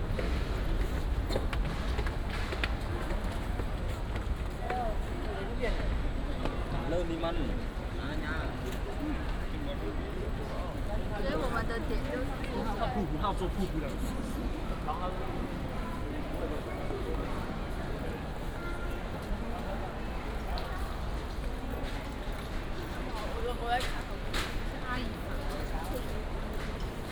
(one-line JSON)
{"title": "臺中火車站, Taichung City - At the station platform", "date": "2017-04-29 19:33:00", "description": "At the station platform, Station information broadcast, Train arrived at the station", "latitude": "24.14", "longitude": "120.69", "altitude": "79", "timezone": "Asia/Taipei"}